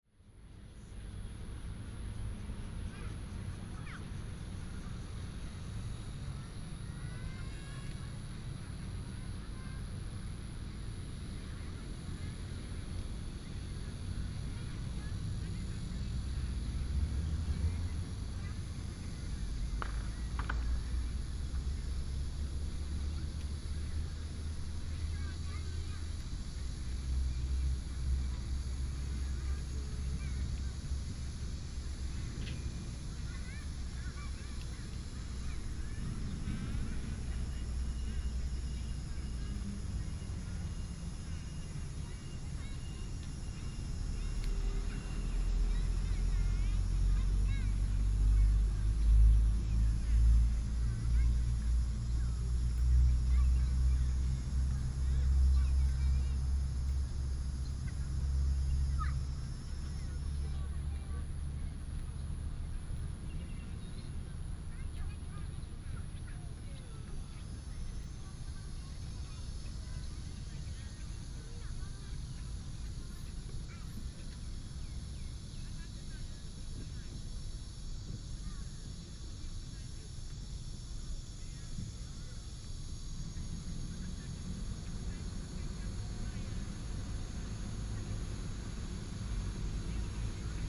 Jiande Park, Bade Dist. - New town park
New town park, Traffic sound, Child, birds sound